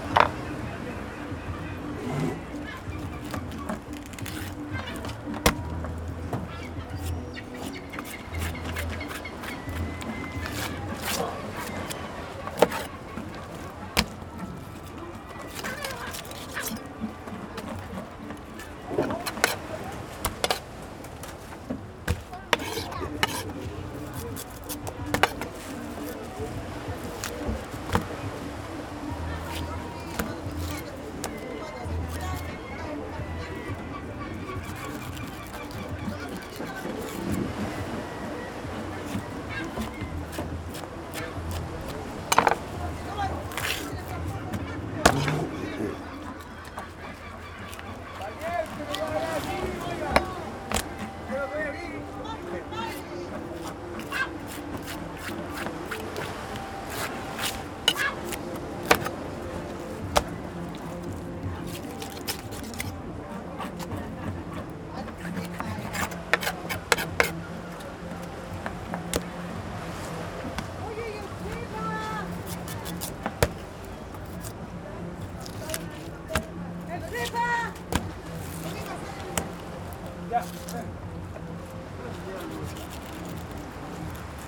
Fish Market, Caleta Portales, Valparaiso (Chile) - Man cutting and cleaning fishes
At the fish market of Caleta Portales (outside), some people are working to clean and cut the fresh fish.
Close recording with some voices and sounds of the market in background, as well as the sea, waves and birds behind.
Recorded by a MS Setup Schoeps CCM41+CCM8
In a Cinela Leonard Windscreen
Sound Devices 302 Mixer and Zoom H1 Recorder
Sound Reference: 151125ZOOM0015
GPS: -33,0307 / -71,5896 (Caleta Portales)
Valparaíso, Región de Valparaíso, Chile